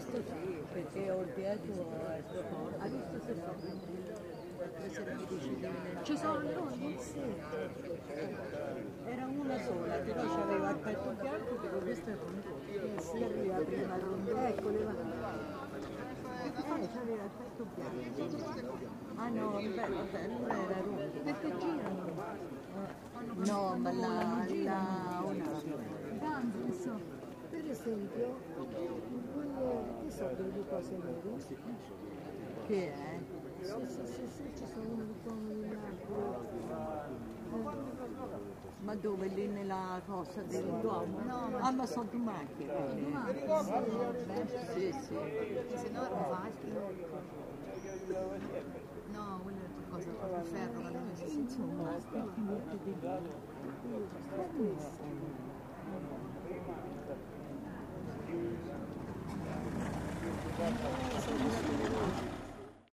Orvieto, chiacchiere di fronte al duomo
Sundown gives the facade of Orvieto dome its final splendour. People get together at aperetivo time to chatter and look at this bewildering beauty.